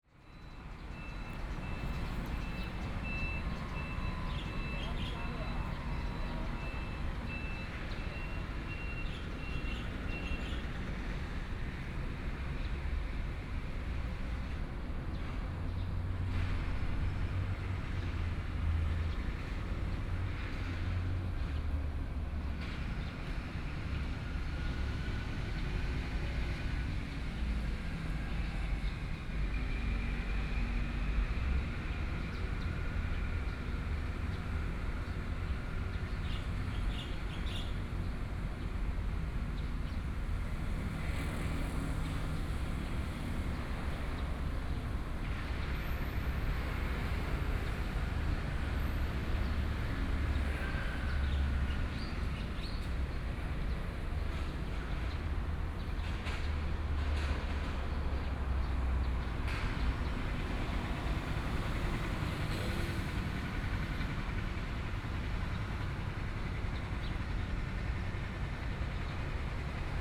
In the small square, Under the tree, Road construction noise, Traffic Sound, Hot weather, Birds